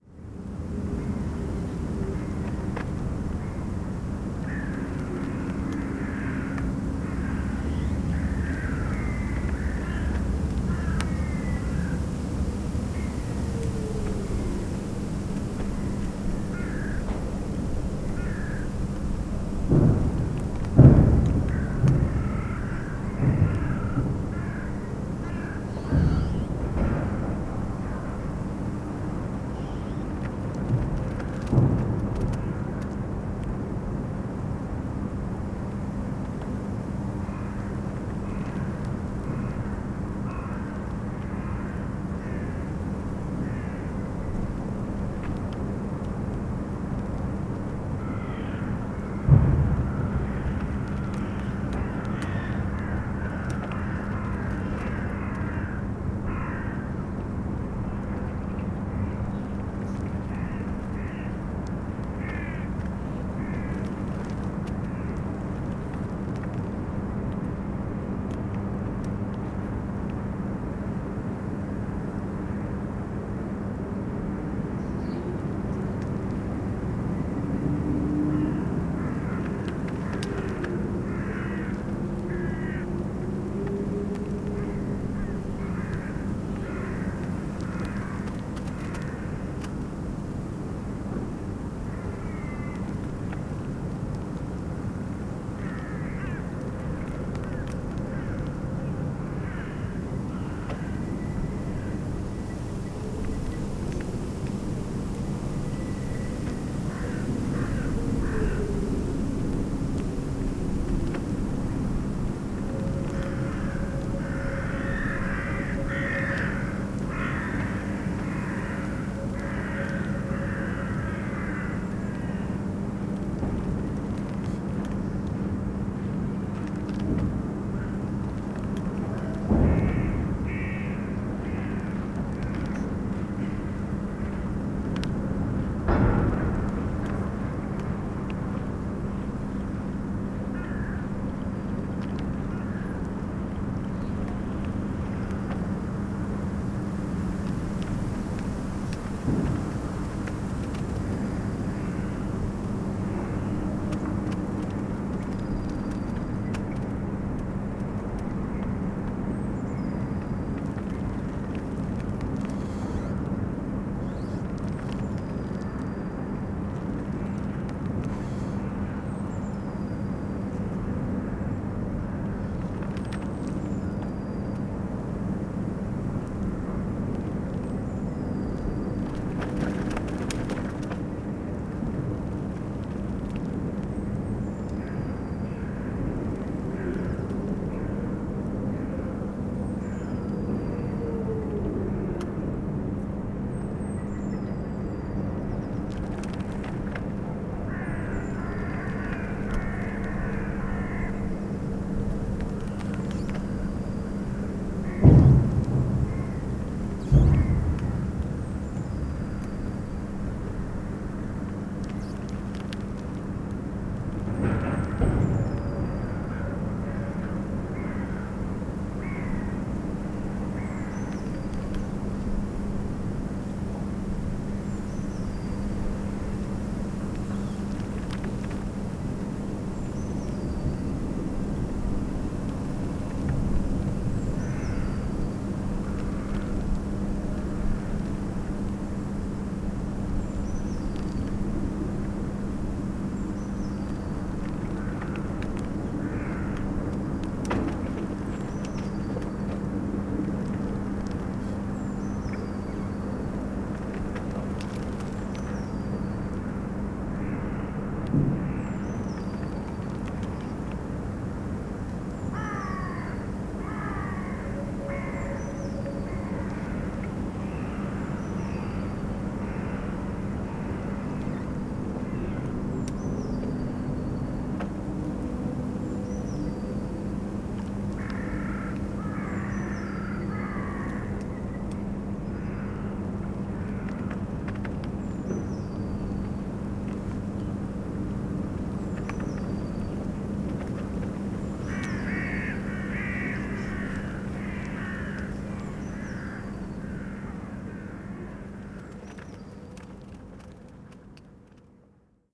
berlin, plänterwald: spree - the city, the country & me: spree river bank
cracking ice of the frozen spree river, crows, distant sounds from the power station klingenberg, a tree rustling in the wind
the city, the country & me: january 26, 2014